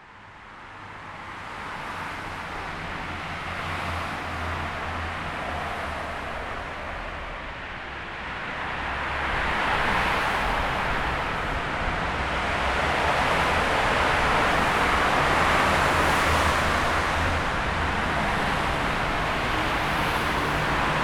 under a railroad flyover over Hetmanska str.
14 November 2010, 11:47